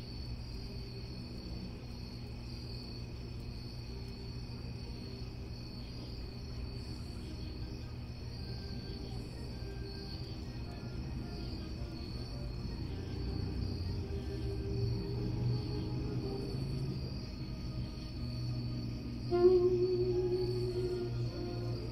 The College of New Jersey, Pennington Road, Ewing Township, NJ, USA - Saxophones
Saxophones rehearsing at night